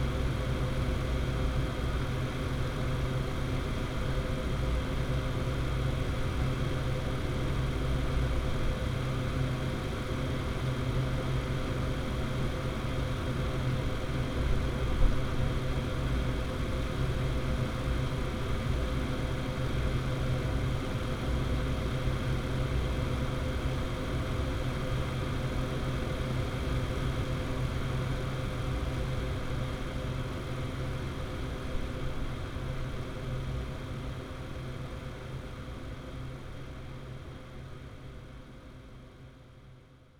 workum, het zool: in front of marina building - the city, the country & me: outside ventilation of marina building
the city, the country & me: august 1, 2012
Workum, The Netherlands, 1 August